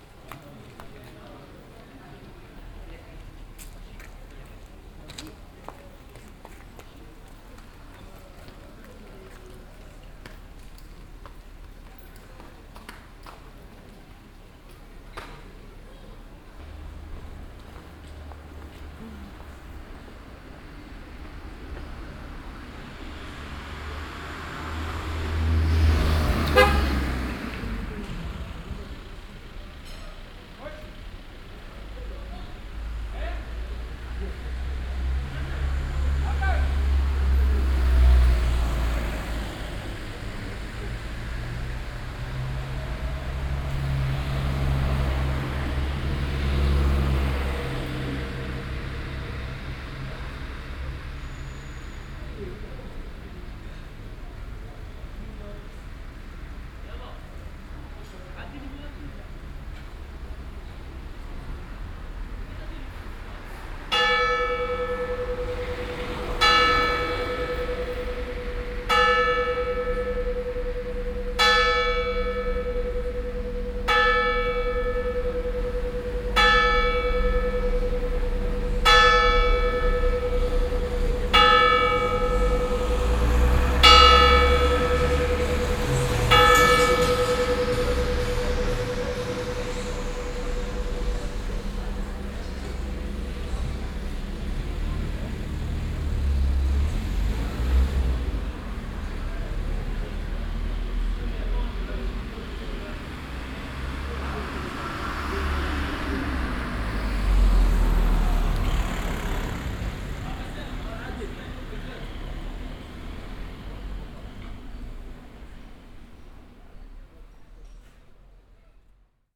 On the main street of the small village in the night. Cars and passengers passing by, a group of young men sitting and talking on the stairs to the old church. The sound of the 22.00 hour bell.
international village scapes - topographic field recordings and social ambiences